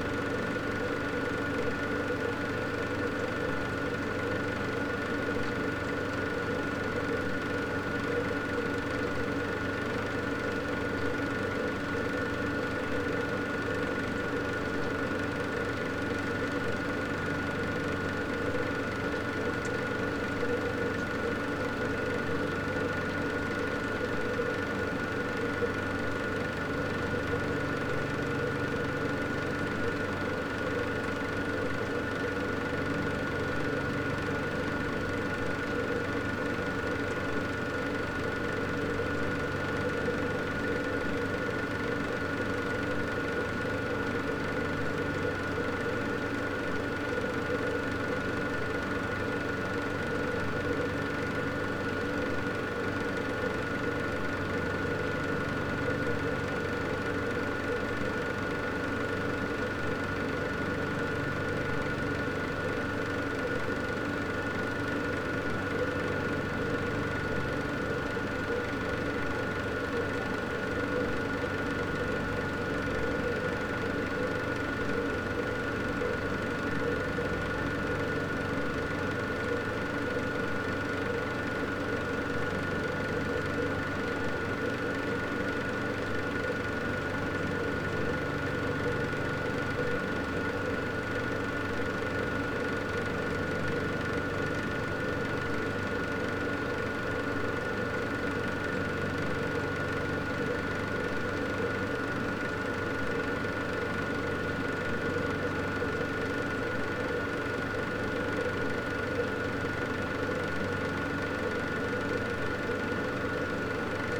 berlin: friedelstraße - the city, the country & me: water pump
sewer works site, water pump, water flows into a gully
the city, the country & me: february 6, 2014